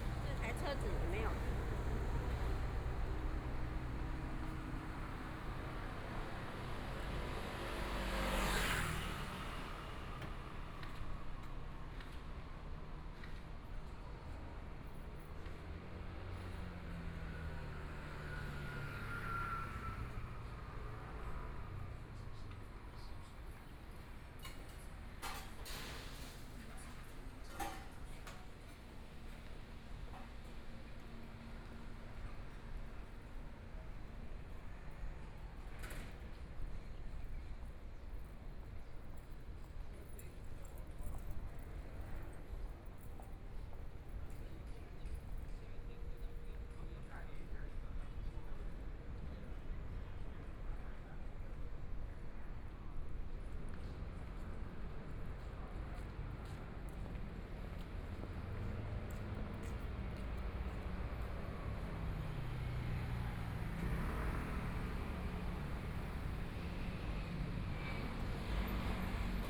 Siping St., Taipei City - soundwalk
Walking on the street, Traffic Sound, Through different shops and homes, Walking in the direction of the East
Please turn up the volume
Binaural recordings, Zoom H4n+ Soundman OKM II